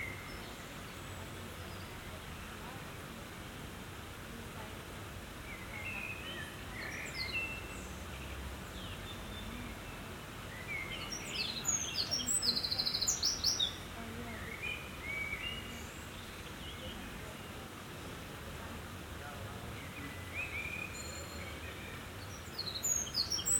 Tech Note : Ambeo Smart Headset binaural → iPhone, listen with headphones.